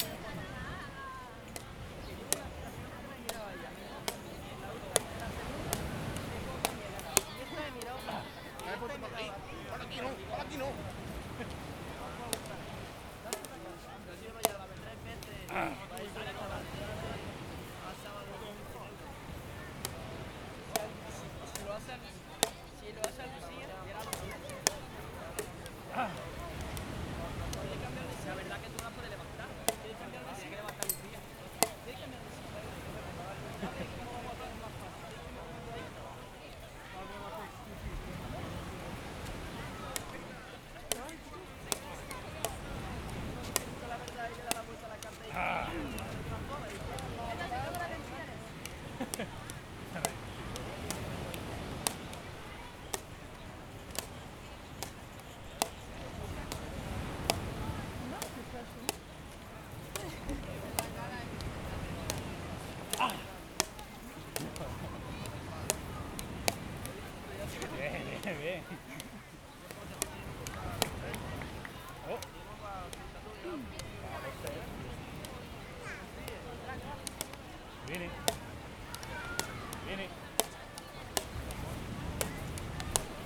C. Prta del Mar, 2A, 29780 Nerja, Málaga, Espagne - Nerja - Espagne - Jeu de plage - Ambiance
Nerja - Espagne
Jeu de plage - Ambiance
ZOOM H6
Málaga, Andalucía, España, 11 August 2015